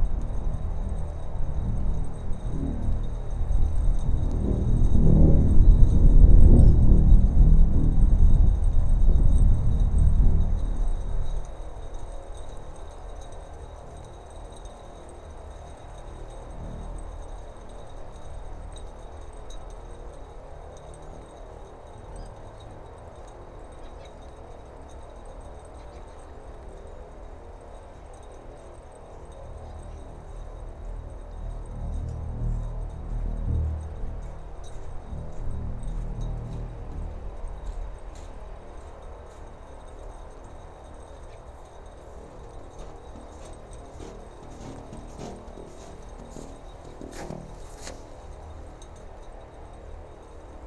Utena, Lithuania, in the tubes
two metallic tubes found. two small omni mics. wind and snow.